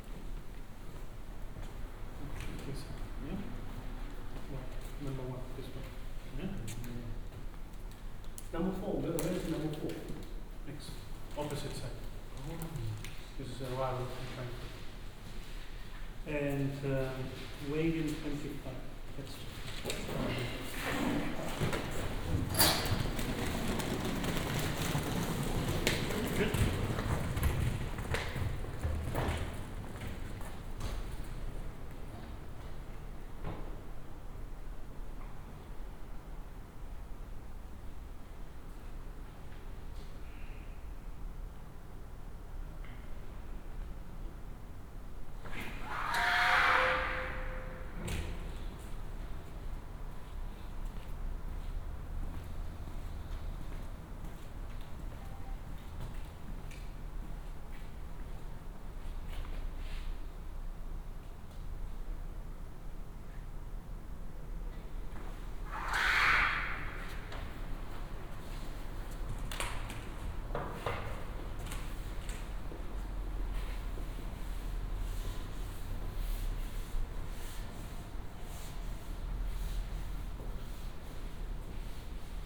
Limburg an der Lahn, ICE station - entrance hall ambience
ICE station Limburg, entrance hall ambience. this is a quite strange station, no shops, bars, cafes etc., just a quickly built hall, very uncomfortable, mainly for commuters. some high speed trains from Cologne to Frankfurt stop here, connecting the country side with the centers.
(Sony PCM D50, OKMII)
Limburg, Germany